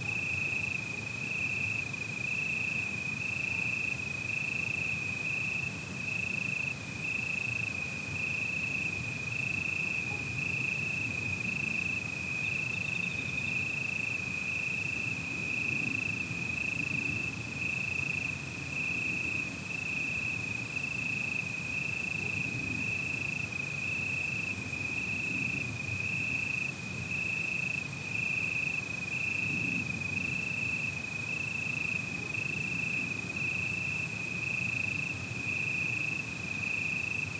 SBG, Camí de Rocanegre - Noche
Paisaje nocturno donde los insectos son los protagonistas con sus incesantes altas frecuencias. Tráfico ocasional desde la cercana carretera de Vic, aullidos de algunos perros solitarios y las siempre puntuales campanadas del reloj.
St Bartomeu del Grau, Spain, 11 August 2011